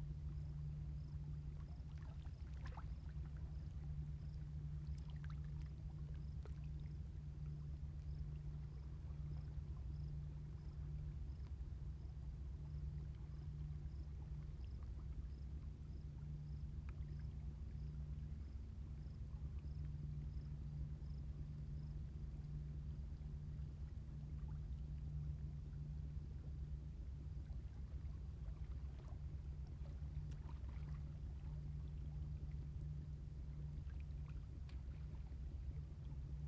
青螺村, Huxi Township - Tide
Tide, In the coastal edge, Seabirds sound, The distant sound of fishing vessels
Zoom H2n MS+XY
21 October, 16:48